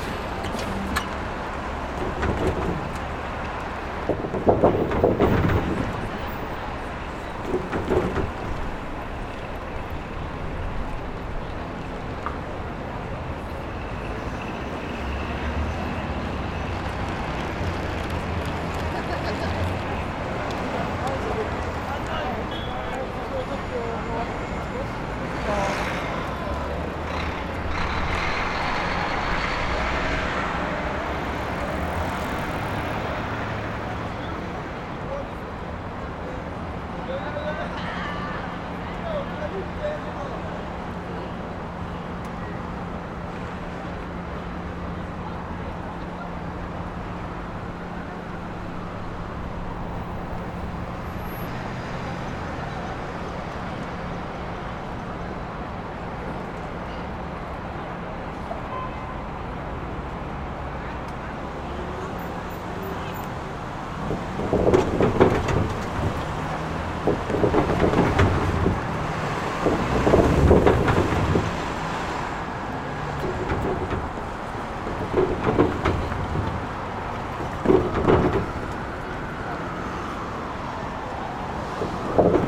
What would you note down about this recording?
Traffic rolling over large construction steel plates next to the New York Public Library.